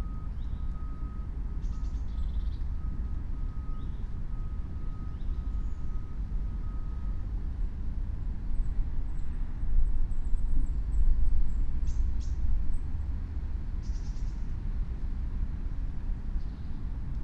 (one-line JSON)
{"title": "Berlin, Alt-Friedrichsfelde, Dreiecksee - train junction, pond ambience", "date": "2021-08-30 08:00:00", "description": "08:00 Berlin, ALt-Friedrichsfelde, Dreiecksee - train triangle, pond ambience", "latitude": "52.51", "longitude": "13.54", "altitude": "45", "timezone": "Europe/Berlin"}